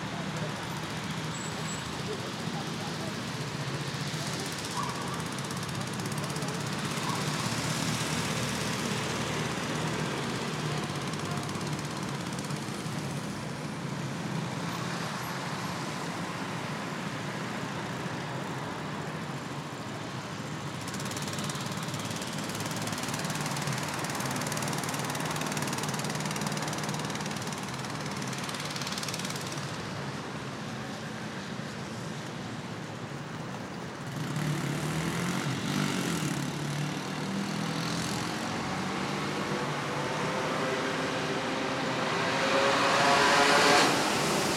Dg., Bogotá, Colombia - Paisaje Sonoro, Zona Residencial
The soundscape was recorded near Calle 80 in the city of Bogota at 6:00 pm. A place where the traffic is constant.
At this time of day, it was raining very lightly, so the street when the cars pass, it sounds damp. And since it is a residential area, you can see dogs barking, and people in the distance talking.